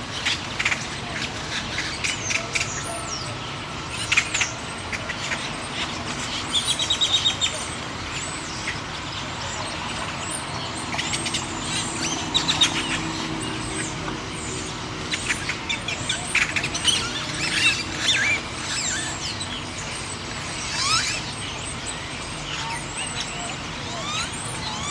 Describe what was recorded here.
Recorded from the sunroof of a 1991 Volvo 940 to cut back on wind noise with a Marantz PMD661 and a pair of DPA 4060s.